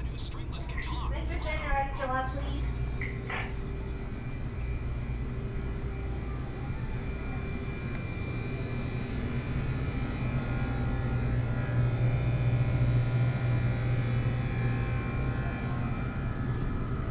Walgreens on World Listening Day - shopping and recording in Walgreens
walking through Walgreens store, guitar toys display, refrigerator drones, moozak, shoppers, cashiers, World Listening Day, WLD
IL, USA, 18 July 2010